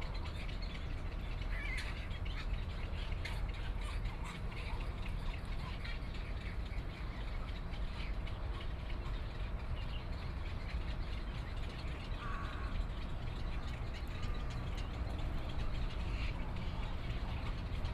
羅東林業文化園區, Luodong Township - Beside railroad tracks
Air conditioning noise, Trains traveling through, Beside railroad tracks, Birdsong sound